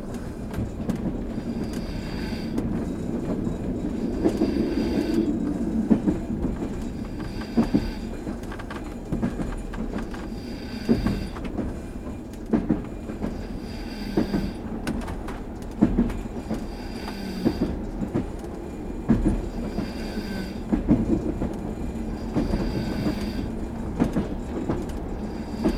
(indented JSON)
{
  "title": "Luh, Zakarpats'ka oblast, Ukraine - Sleeping through the Carpathians",
  "date": "2014-07-22 00:32:00",
  "description": "Night-journey on the train 601Л from L'viv to Chop, platskartny (3rd class bunks), binaural recording.",
  "latitude": "48.97",
  "longitude": "22.80",
  "altitude": "469",
  "timezone": "Europe/Uzhgorod"
}